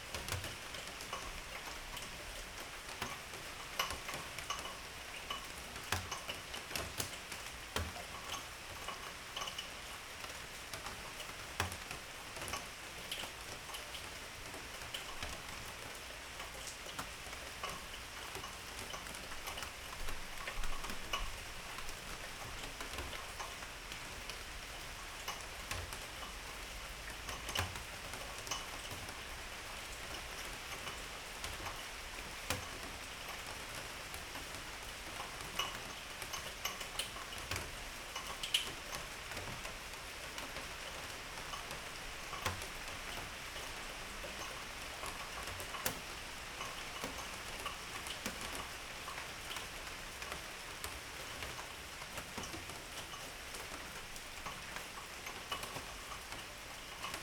rain at night, world listening day